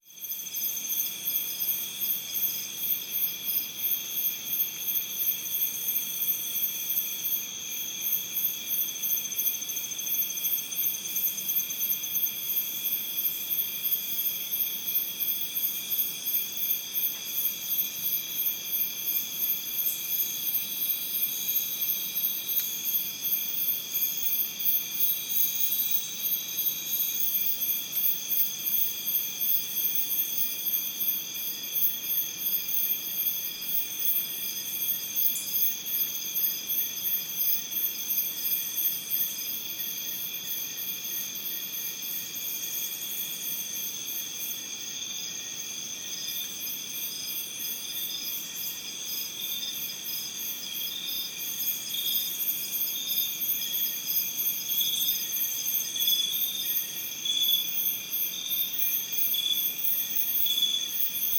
Iracambi - dusk
recorded at Iracambi, a NGO dedicated to preserve and grow the Atlantic Forest
Muriaé - MG, Brazil, 28 January 2017